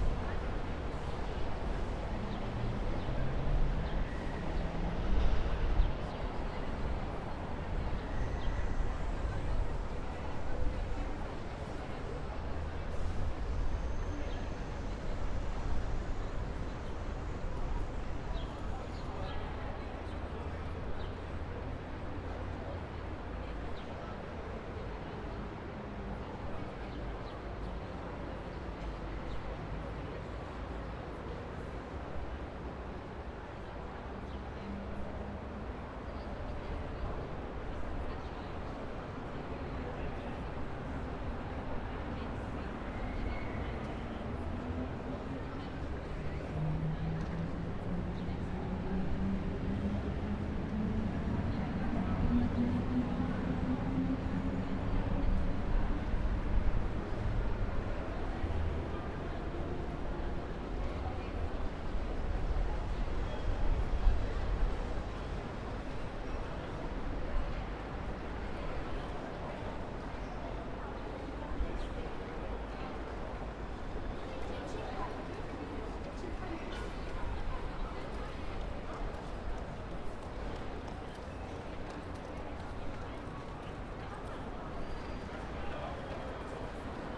{"title": "Escher Wyss, Zürich, Sound and the City - Sound and the City #22", "date": "2012-07-18 20:35:00", "description": "Starker Widerspruch zwischen auditiver und visueller Wahrnehmung: Der Hörort auf dem Fussgängeraufgang zur Hardbrücke, in der Umgebung nur Stein und Glas, wirkt akustisch wie ein öffentlicher Platz: Restaurationsgeräusche aus dem benachbarten Geroldareal (vom Standort aus nicht zu sehen), Schritte in verschiedenen Tempi (zum / vom Bahnhof), Stimmen, Gespräche.\nArt and the City: Christian Jankowski (Die grosse Geste, 2012)", "latitude": "47.39", "longitude": "8.52", "altitude": "407", "timezone": "Europe/Zurich"}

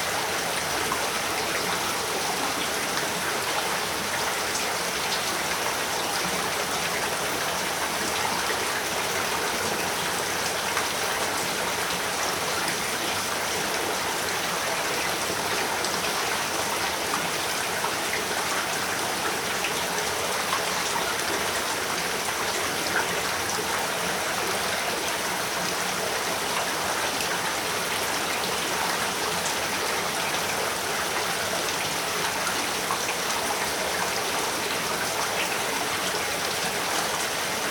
{"title": "Vrazji prolaz, Skrad, open cave", "date": "2008-08-21 16:38:00", "description": "Big cave, stream.", "latitude": "45.43", "longitude": "14.89", "altitude": "322", "timezone": "Europe/Zagreb"}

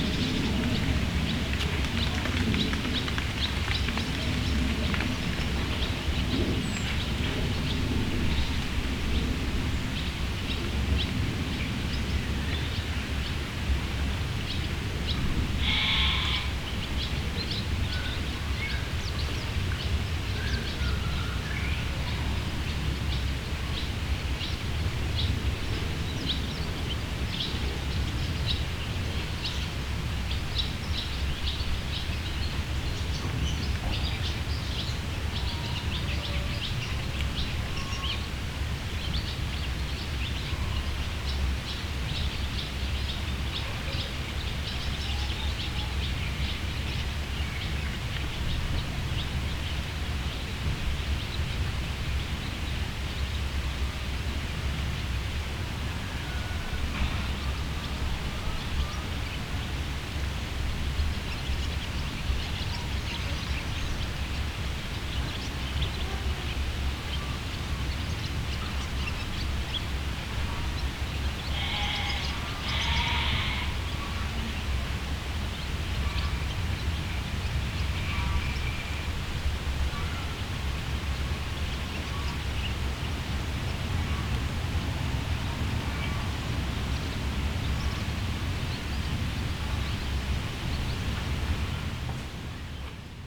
{"title": "Feldberger Seenlandschaft, Germany - morgen am carwitzer see", "date": "2016-08-20 08:14:00", "description": "ziegen, vögel, ein flugzeug, ein beo bei leichtem regen.\ngoats, birds, a plane and a beo in a lightly rainy athmosphere.", "latitude": "53.30", "longitude": "13.45", "altitude": "85", "timezone": "Europe/Berlin"}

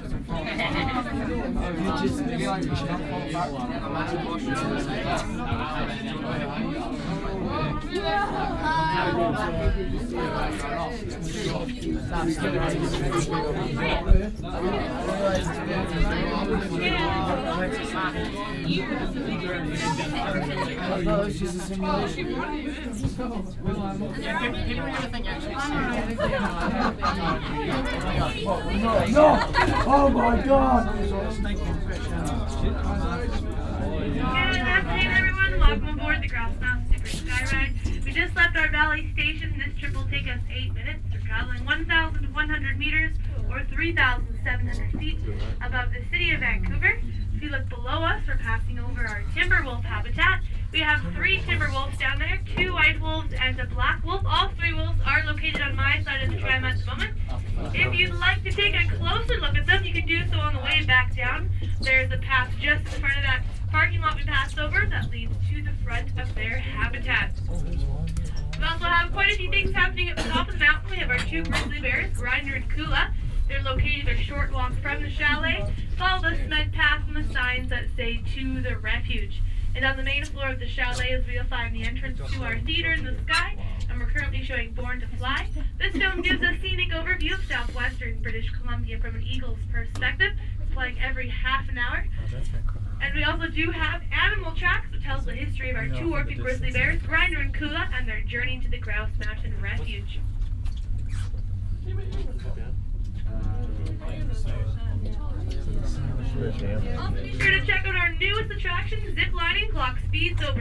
{"title": "vancouver, grouse mountain, skyride, on the way up", "description": "in the cabin on it's way up to the mountain station, a guide giving informations via mic while the ride\nsoundmap international\nsocial ambiences/ listen to the people - in & outdoor nearfield recordings", "latitude": "49.37", "longitude": "-123.10", "altitude": "330", "timezone": "GMT+1"}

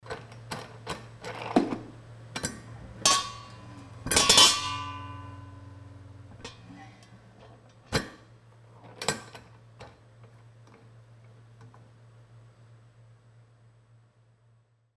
{"title": "heinerscheid, cornelyshaff, brewery - heinerscheid, cornelyshaff, mash tank cap", "date": "2011-09-12 17:43:00", "description": "To control the process of brewing the brew master has to open the mash tank cap several times.\nHeinerscheid, Cornelyshaff, Brauerei, Maischetankdeckel\nUm den Brauvorgang zu kontrollieren, muss der Braumeister den Maischetankdeckel einige Male öffnen.\nHeinerscheid, Cornelyshaff, couvercle de la cuve-matière\nLe maître brasseur doit ouvrir plusieurs fois le couvercle de la cuve-matière pour contrôler le processus de brassage.", "latitude": "50.10", "longitude": "6.09", "altitude": "525", "timezone": "Europe/Luxembourg"}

